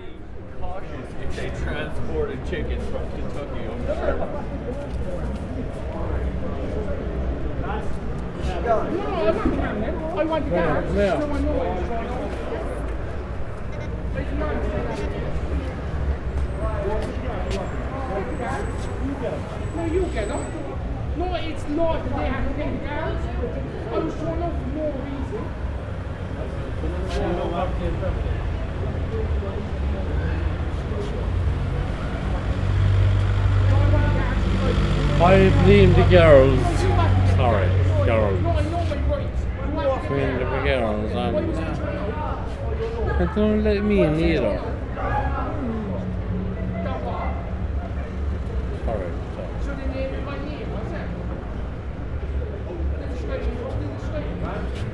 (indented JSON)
{
  "title": "Centre, Cork, Co. Cork, Ireland - The Sunken Hum Broadcast 26 - Cork Night Outside the Old Oak",
  "date": "2013-01-25 23:24:00",
  "description": "Standing outside of The Old Oak, a pub on Oliver Plunkett Street in Cork City. Catching tidbits of drunken chatter with a Zoom H4.",
  "latitude": "51.90",
  "longitude": "-8.47",
  "altitude": "9",
  "timezone": "Europe/Dublin"
}